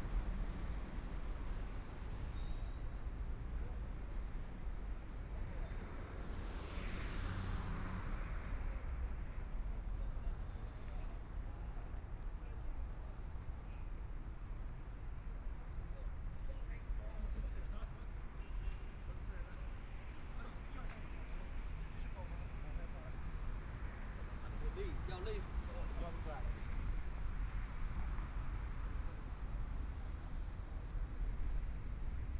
楊浦區五角場, Shanghai - in the Street
in the Street, traffic sound, Binaural recording, Zoom H6+ Soundman OKM II
Shanghai, China, 20 November 2013, ~00:00